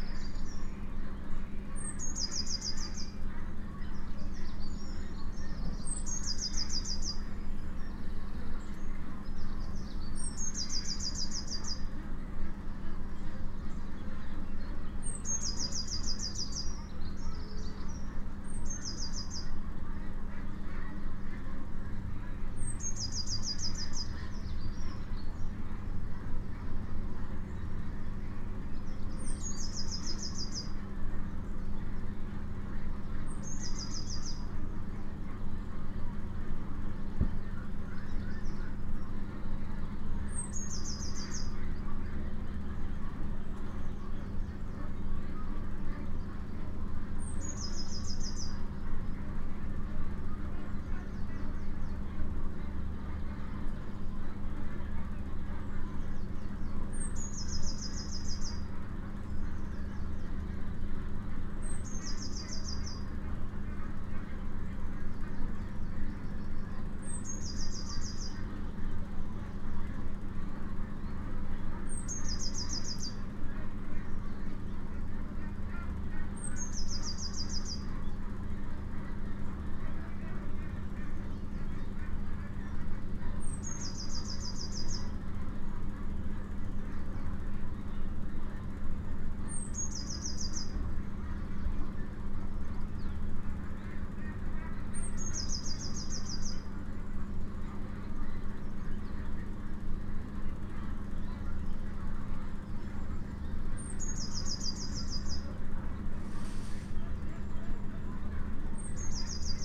Fen Lane, Eye, Suffolk, UK - the caged and the free
Fen Lane is a narrow corridor offered to wildlife running tight between the sterile silence of regimented, commercial orchards, and the putrid smell of an industrial poultry unit. Poultry can be heard incessantly over their heated, ventilated housing. In stark contrast, wild birds sing freely among the abandoned hazel coppice and large ivy-clad willow and oaks of the lane.
East of England, England, United Kingdom, 29 April